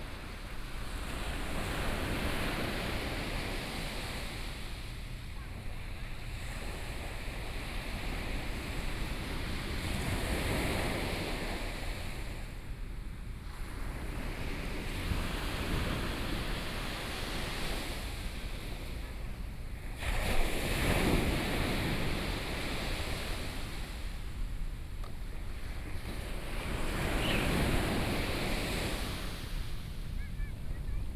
旗津區振興里, Kaoshiung City - Sound of the waves
In the beach, Sound of the waves